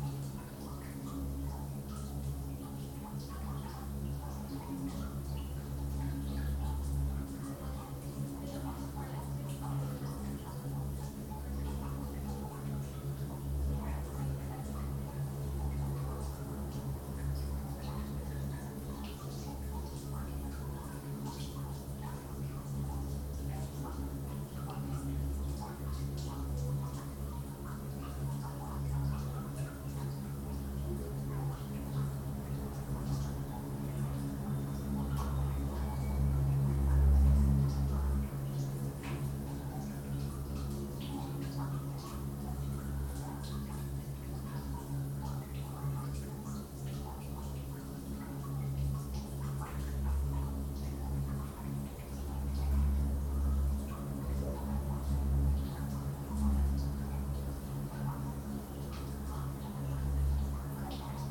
Belfast, Belfast, Reino Unido - Singing pipe
At the back of the engineering building at Queen's, an abandoned pipe modulates the dialogue between a leaking hose and the street.
Zoom H2n in XY setup